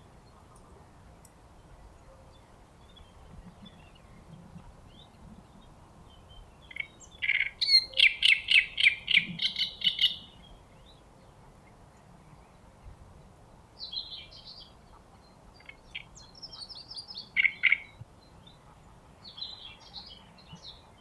Coswig (Anhalt), Deutschland - Kliekener Aue - Vogelstimmen
Die Kliekener Aue ist ein Naturschutzgebiet in der Elbaue nahe Wittenberg - Seen, Feuchtgebiete, Wiesen, Erlenbruchwald. Man hört den Gesang eines Drosselrohrsängers im Schilf, im Hintergrund schnatternde Graugänse im Flug.
Sachsen-Anhalt, Deutschland, 29 April 2022